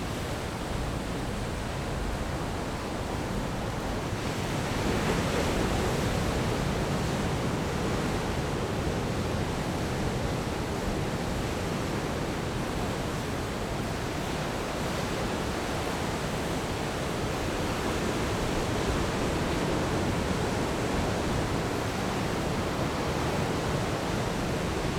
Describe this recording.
Sound of the waves, Very hot weather, Zoom H6+ Rode NT4